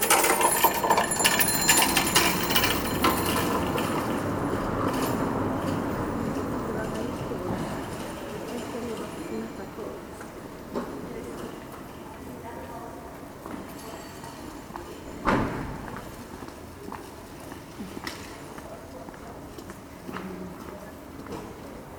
San Michele Church, Pavia, Italy - 03 - October, Monday 6pm, 20C, local people passing by
Warm evening, local people passing by, bikes, cars, a couple standing and watching the church facade